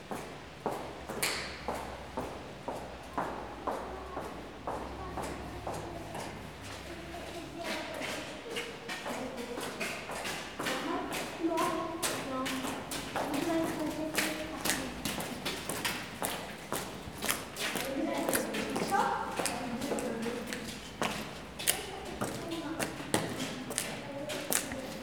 Olsztyn, Polska - Heels in the tunnel from distance